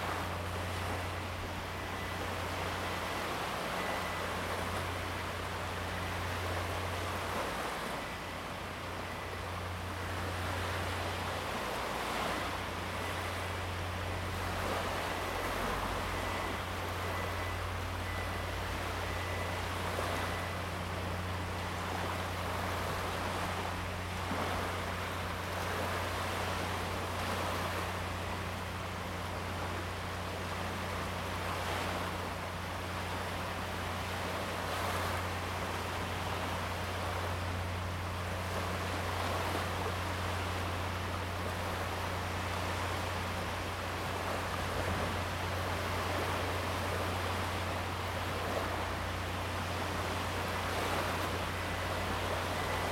Polska - Intrusion
Waves at Stogi beach, disturbed by the constant hum of the nearby cargo terminal
Gdańsk, Poland, 14 October